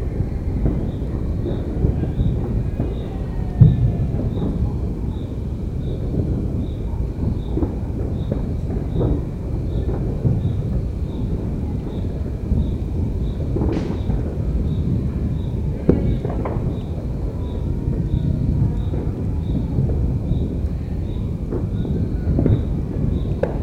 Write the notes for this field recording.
New Year 2022. EM 172's on a Jecklin Disc via SLC-1 to Zoom H2n